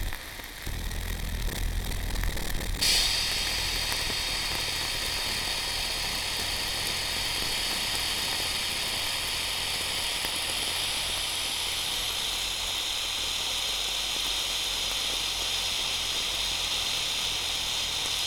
Poznan, Mateckiego street, kitchen - pot of chili
warming up a serving of pumpkin chili. very talkative dish.
Poznań, Poland, 11 November 2012